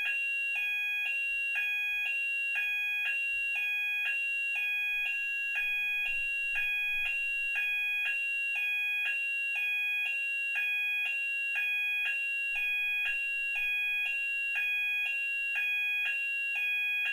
Dumfries, UK - fire alarm ...
fire alarm ... dpa 4060s in parabolic to mixpre3 ... best part of two hours before it was silenced ...